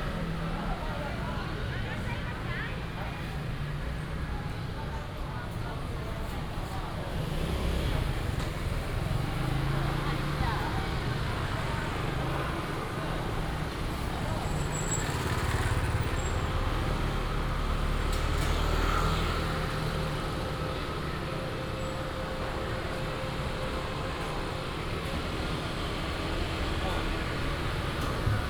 卓蘭鎮公有零售市場, Miaoli County - Walking in the market area
Walking in the market area, vendors peddling, Binaural recordings, Sony PCM D100+ Soundman OKM II